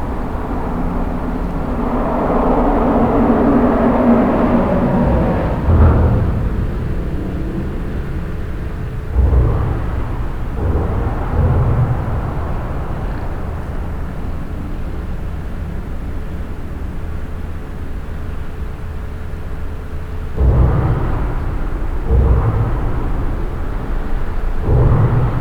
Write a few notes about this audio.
Underneath the Rhine bridge at Wesel. The sounds of cars crossing the bridge and resonating in the big metall bridge architecture. Second recording with wind protection. soundmap d - social ambiences and topographic field recordings